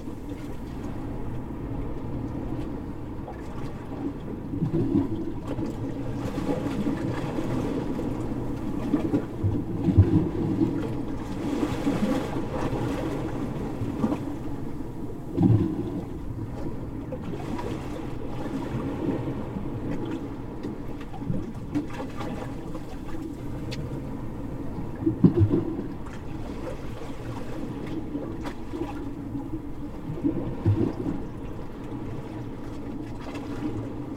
Fleury, France - Saint-Pierre-la-Mer
recording in the rocks ( Saint-Pierre-La-Mer
Occitanie, France métropolitaine, France, 26 December, 15:50